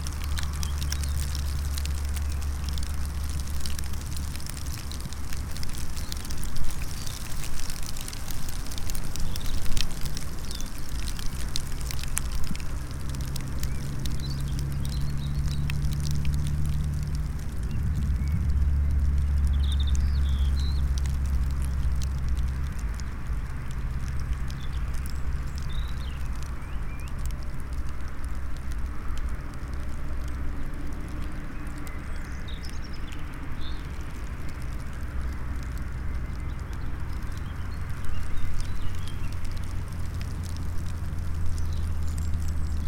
Weimar, Deutschland - nordPunkt
SeaM (Studio fuer elektroakustische Musik) - klangOrte - nordPunkt
Germany, 23 April 2012